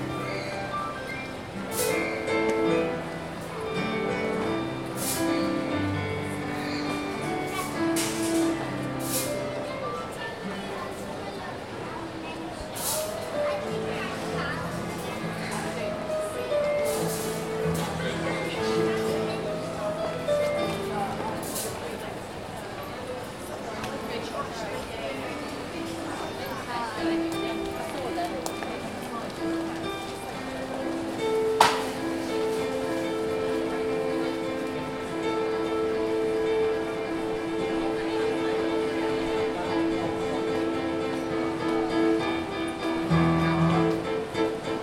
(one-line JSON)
{"title": "West Quay, Southampton, UK - 045 Shoppers, piano, M&S security alarm", "date": "2017-02-14 13:30:00", "latitude": "50.90", "longitude": "-1.41", "altitude": "16", "timezone": "GMT+1"}